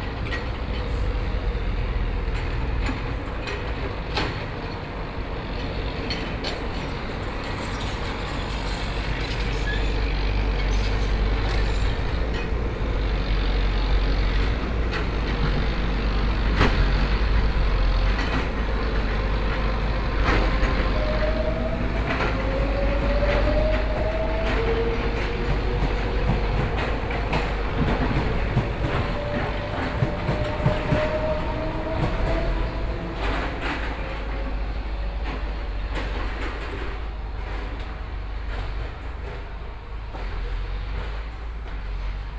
Zuoying Station, Kaohsiung City - Walking in the station
Walking from the station platform, Walking to the station exit direction
15 May, 10:48